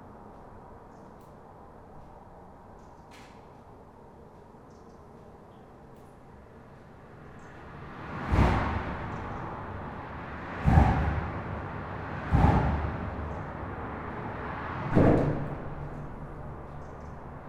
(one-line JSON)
{"title": "Camon, France - Inside the bridge", "date": "2017-11-05 12:50:00", "description": "Inside the Jules Verne bridge. Its clearly not the best viaduct, sound is quite basic as its a concrete bridge and not a steel bridge, but ok, simply I was here... The Jules Verne is a major bridge, enormous concrete bulk, crossing swamps and the Somme river. As its not steel, the concrete absorbs resonance.", "latitude": "49.89", "longitude": "2.37", "altitude": "40", "timezone": "Europe/Paris"}